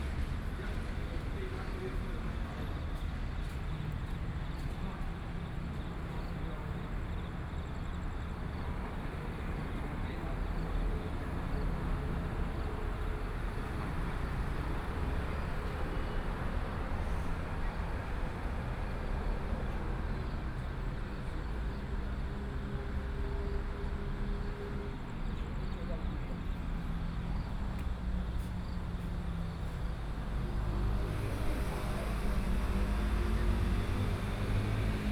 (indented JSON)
{
  "title": "倉前路, 羅東鎮信義里 - Trains traveling through",
  "date": "2014-07-27 19:05:00",
  "description": "In the nearby railroad tracks, Traffic Sound, Trains traveling through, Birds",
  "latitude": "24.68",
  "longitude": "121.77",
  "altitude": "15",
  "timezone": "Asia/Taipei"
}